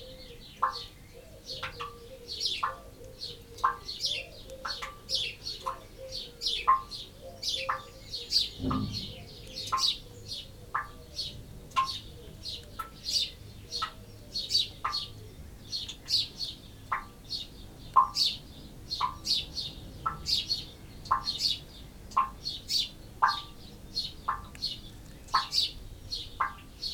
Luttons, UK - down the drainpipe ...
down the drainpipe ... droplets recorded at the bottom of a downpipe ... single lavalier mic blu tacked to a biro placed across the grating ... background noise ... bird calls from collared dove ... blackbird ... house sparrow ... wren ...
Malton, UK, 6 June 2017, 05:30